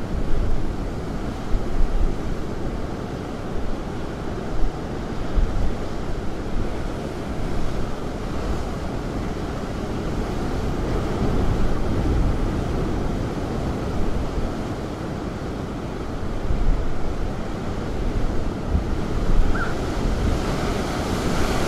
Rocher des souffleurs, saint leu, ile de la reunion
coucher de soleil soir de pleine lune mer agitée !!!